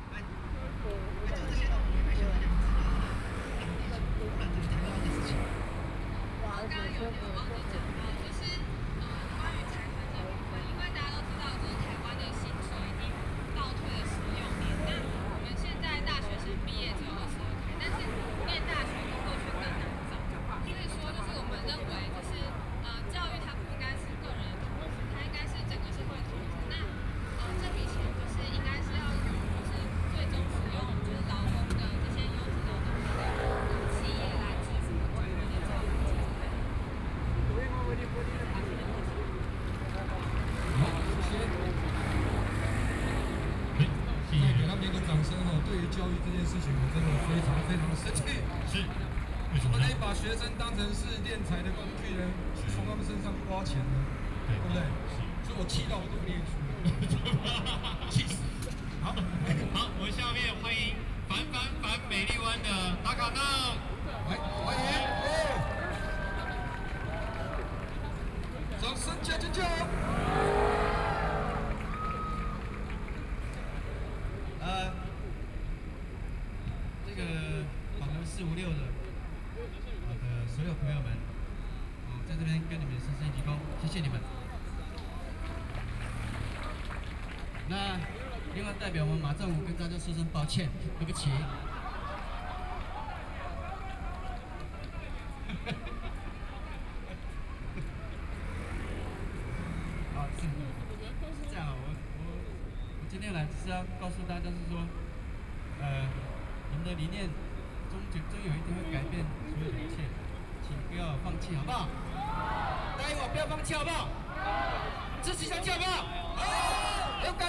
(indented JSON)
{
  "title": "Chiang Kai-Shek Memorial Hall, Taipei - Antinuclear",
  "date": "2013-12-27 19:22:00",
  "description": "Citizen groups around Taiwan are speech, Traffic Sound, Binaural recordings, Zoom H6+ Soundman OKM II",
  "latitude": "25.04",
  "longitude": "121.52",
  "altitude": "8",
  "timezone": "Asia/Taipei"
}